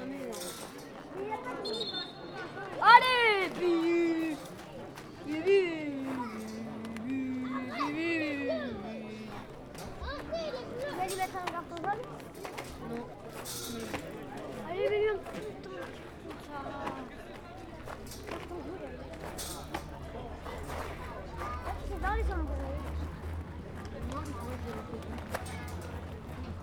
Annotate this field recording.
Listening to Bergerac FC v Andrezieux in the CFA National 2 from the gravel path behind the dugout. I made this recording with my Tascam DR-40.